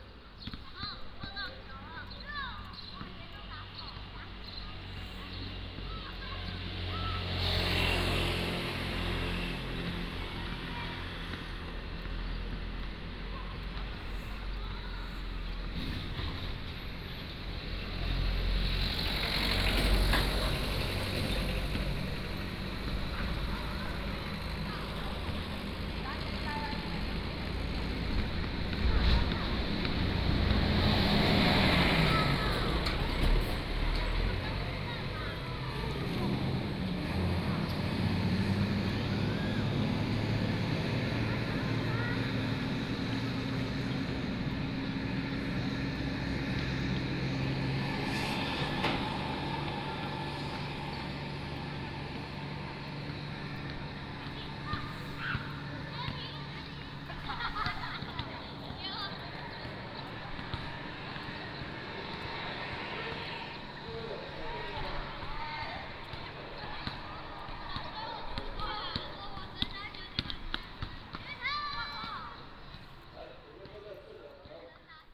坂里國民小學, Beigan Township - In the next school
In the next school, Traffic Sound, Small village